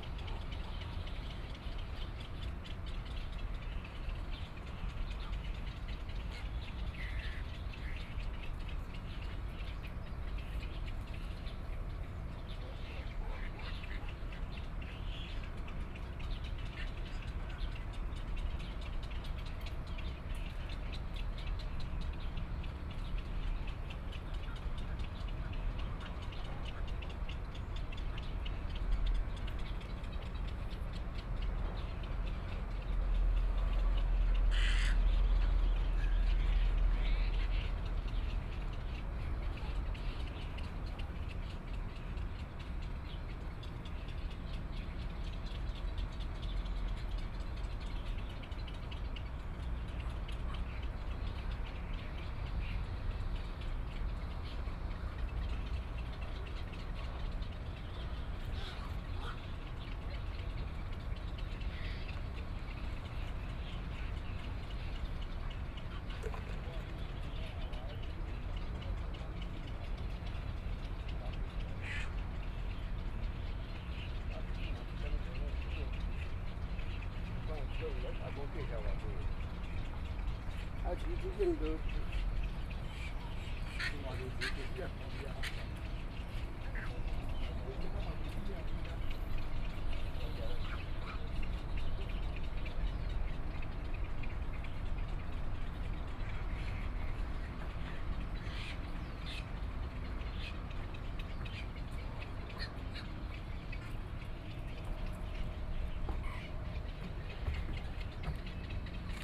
羅東林業文化園區, Luodong Township - Beside railroad tracks
Air conditioning noise, Trains traveling through, Beside railroad tracks, Birdsong sound